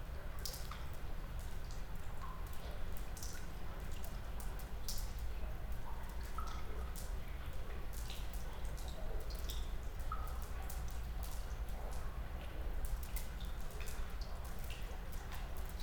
When it flows less J. Basanavičiaus g., Utena, Lithuania - when it flows less
when it flows less
18 October 2018, 13:41